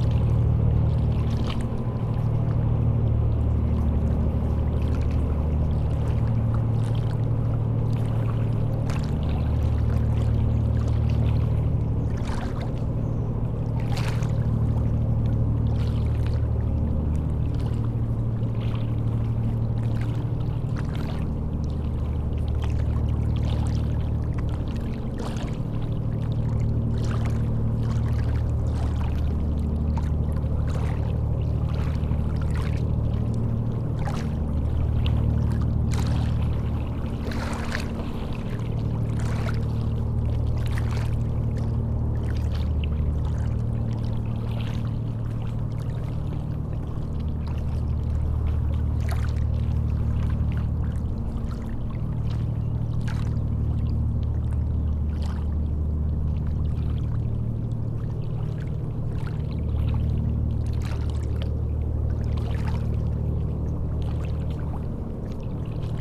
Tosny, France - Boat
A very long double boat is passing by on the Seine River. It's the Dauphin from Lafarge. It's an industrial boat pushing two enormous containers. It's transporting sand and gravels, coming from the nearby quarry.
21 September 2016, 16:00